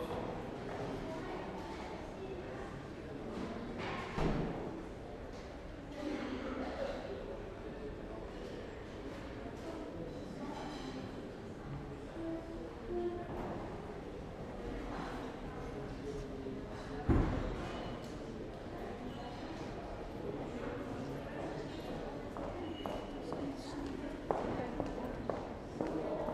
hotel carlton hall dentrée
enregisté sur ares bb le 13 fevrier 2010
Cannes, France